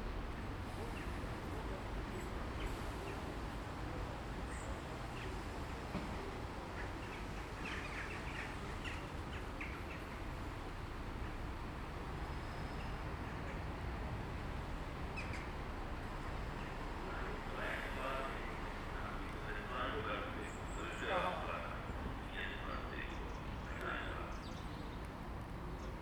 Ahlen, Bahnhof, Germany - sound of trains passing...
waiting at the platform...
Kreis Warendorf, Nordrhein-Westfalen, Deutschland